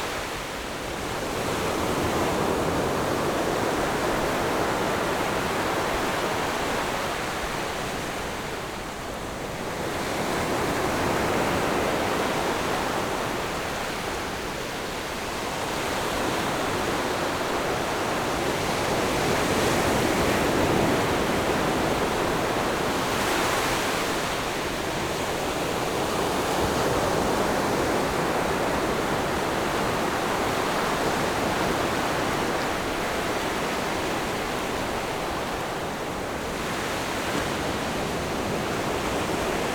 In the beach, Sound of the waves
Zoom H6 MS+ Rode NT4
Yilan County, Taiwan, 27 July 2014, 14:57